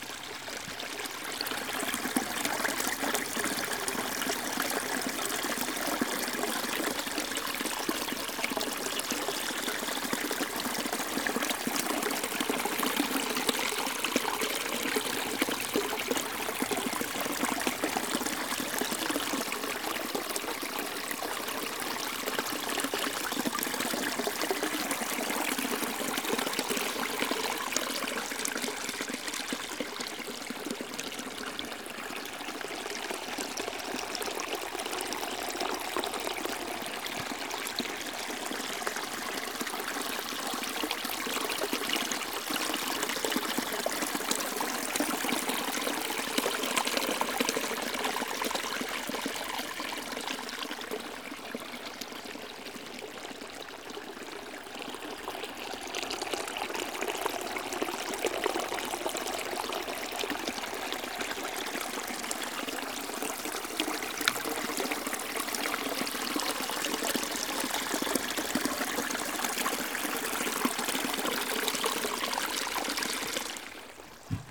Saint-Savournin, France - Le Merlançon s'enfonce dans les profondeurs
a proximité du GR2013, le Merlançon affulent du fleuve Huveaune va disparaitre de son lit en surface. Il faudra attendre les prochaines pluies pour le voir et l'entendre rouler ses flots comme autrefois du temps de nos anciens.
Near the GR2013, the Merlançon river affluent of the Huveaune river disappears from its bed on the surface. It will be necessary to wait until the next rains to see it and to hear it roll its waves as formerly in the time of our ancients.
19 January 2017